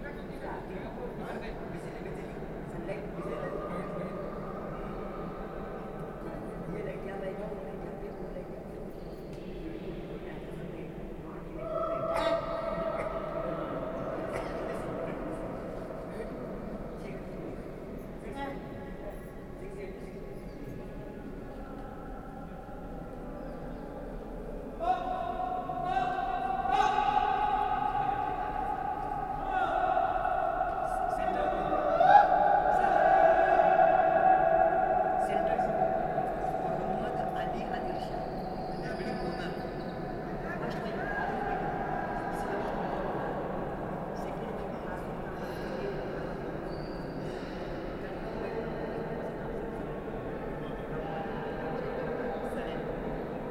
India, Karnataka, Bijapur, Gol Gumbaz, Platform, Muhammad Adil Shah, echoe, Largest Dome in India, The acoustics of the enclosed place make it a whispering gallery where even the smallest sound is heard across the other side of the Gumbaz. At the periphery of the dome is a circular balcony where visitors can witness the astounding whispering gallery. Any whisper, clap or sound gets echoed around 10 times. Anything whispered from one corner of the gallery can be heard clearly on the diagonally opposite side. It is also said that the Sultan, Ibrahim Adil Shah and his Queen used to converse in the same manner. During his time, the musicians used to sing, seated in the whispering gallery so that the sound produced could be reached to very corner of the hall. However, recently visitors to the gallery, in the name of testing the effect, have converted it into a madhouse.